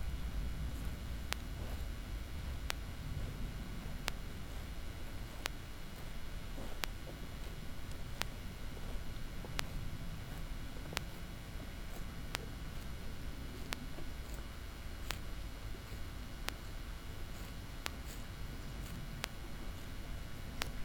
On a footpath nearby a cow pasture with an electric fence. The sound of cows eating the meadow, the soft gurgling of a nearby small stream in the background and the permanent electric pulse of the electric fence. In the far distance the sound of the church bells.
Pintsch, Kuhweide und elektrischer Zaun
Auf einem Fußweg nach einer Kuhweide mit einem elektrischen Zaun. Das Geräusch von fressenden Kühen, das sanfte Gurgeln eines nahen Baches im Hintergrund und der ständige elektrische Schlag des Elektrozauns. In der Ferne das Läuten von Kirchenglocken.
Pintsch, pâture pour les vaches et clôture électrifiée
Sur un chemin a proximité d’une pâture pour vaches avec une clôture électrifiée. Le bruit de vaches broutant dans la prairie, le doux glouglou d’un petit ruisseau dans le fond et les décharges électriques régulières de la clôture sous-tension. Dans le lointain, on entend sonner les cloches d’une église.